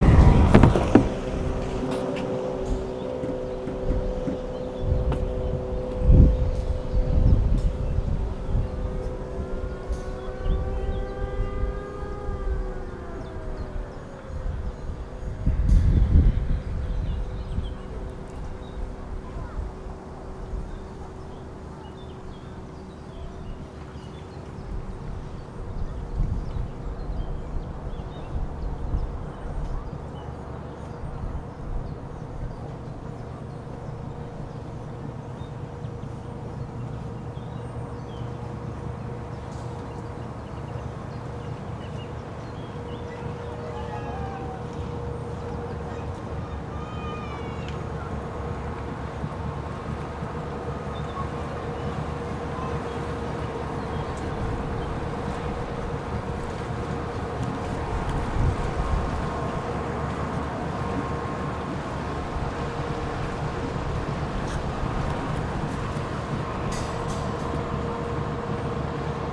узвіз Бузький, Вінниця, Вінницька область, Україна - Alley12,7sound20baseDynamoboat
Ukraine / Vinnytsia / project Alley 12,7 / sound #20 / base Dynamo - boat
27 June 2020